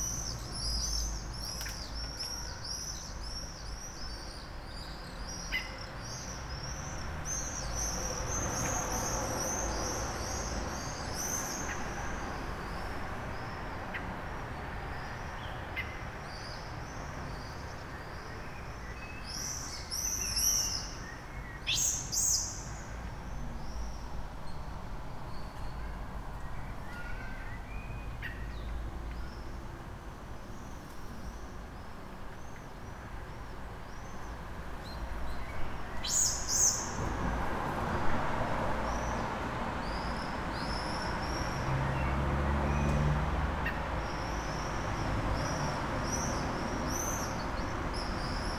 {"title": "from/behind window, Mladinska, Maribor, Slovenia - bird, never heard around this yard", "date": "2015-07-12 09:17:00", "latitude": "46.56", "longitude": "15.65", "altitude": "285", "timezone": "Europe/Ljubljana"}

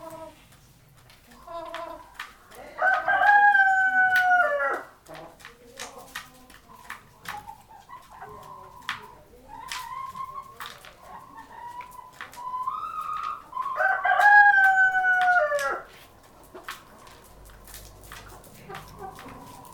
{"title": "Court-St.-Étienne, Belgique - Chicken life", "date": "2017-02-07 10:59:00", "description": "Recording of the chicken secret life during one hour. I put a recorder in a small bricks room, where chicken are, and I went elsewhere.", "latitude": "50.61", "longitude": "4.53", "altitude": "83", "timezone": "GMT+1"}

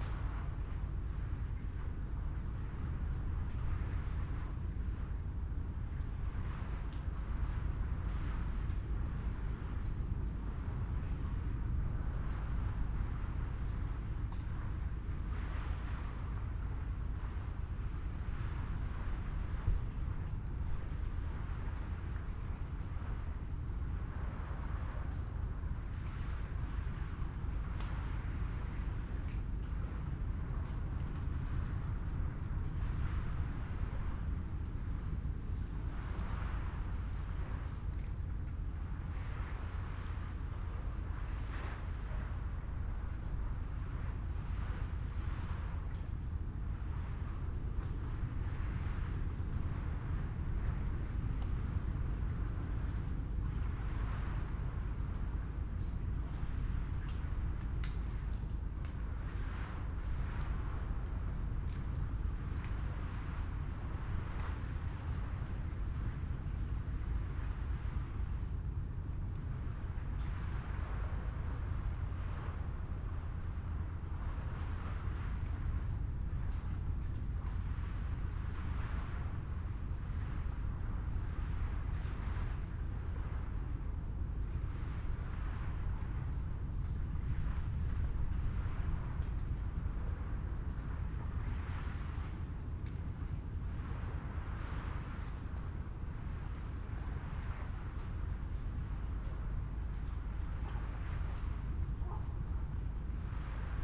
{"title": "Caqalai Island, Lomaiviti, Fidschi - Caqalai Island in the evening", "date": "2012-06-07 20:55:00", "description": "Soundscape of the late evening on Caqalai Island (pronounced Thangalai). Off season. About 10 people on the island and them either already asleep or being quiet. Sound of waves from nearby beach. Click and Crack sounds from twigs and branches. Various Insects. The squeaky and croaky calls from the canopy are from Pacific Reef Herons (Egretta sacra) at their night time or high-tide roost. Dummy head microphone placed in an area covered with trees and lush undergrowth. Mic facing south west. Recorded with a Sound Devices 702 field recorder and a modified Crown - SASS setup incorporating two Sennheiser mkh 20 microphones.", "latitude": "-17.79", "longitude": "178.73", "altitude": "14", "timezone": "Pacific/Fiji"}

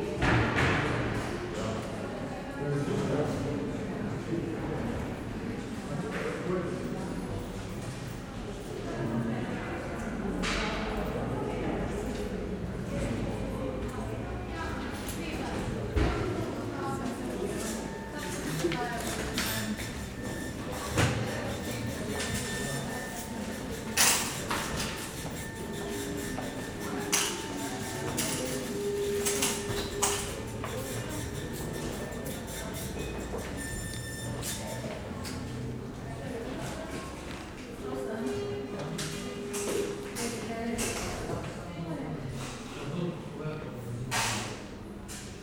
{"title": "post office, Slovenska cesta, Ljubljana - post office ambience", "date": "2012-11-06 12:55:00", "description": "walking around in the main post office\n(Sony PCM D50, DPA4060)", "latitude": "46.05", "longitude": "14.50", "altitude": "310", "timezone": "Europe/Ljubljana"}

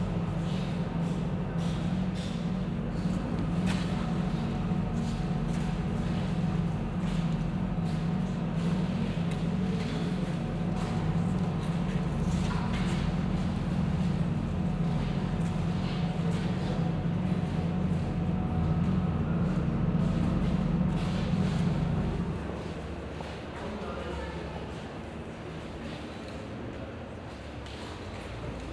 {"title": "Südstadt, Kassel, Deutschland - Kassel, documenta hall, video installation", "date": "2012-09-13 16:10:00", "description": "Inside the documenta hall during the documenta 13.\nThe sound of a video installation by Nalini Malani.\nsoundmap d - social ambiences, art places and topographic field recordings", "latitude": "51.31", "longitude": "9.50", "altitude": "154", "timezone": "Europe/Berlin"}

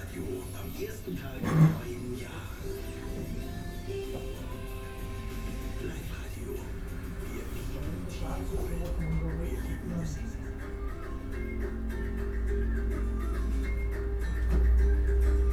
{"title": "Innsbruck, Österreich - annemaries café", "date": "2015-01-01 19:03:00", "description": "annemaries café, amraser str. 1, innsbruck", "latitude": "47.27", "longitude": "11.40", "altitude": "579", "timezone": "Europe/Vienna"}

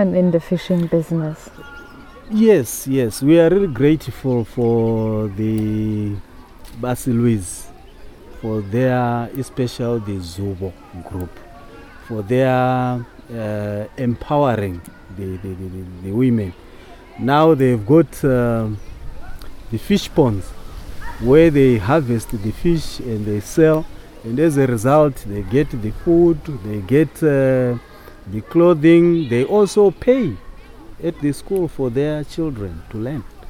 {"title": "Sebungwe Primary School, Binga, Zimbabwe - Women in fishing and gardening", "date": "2016-05-24 10:50:00", "description": "Mr Munenge welcomes and praises the work, which Zubo Trust has been doing to empower the women of this area through garden, fishing and fish farming projects.\nZubo Trust is a Women's organisation bringing women together for self-empowerment", "latitude": "-17.75", "longitude": "27.23", "altitude": "502", "timezone": "Africa/Harare"}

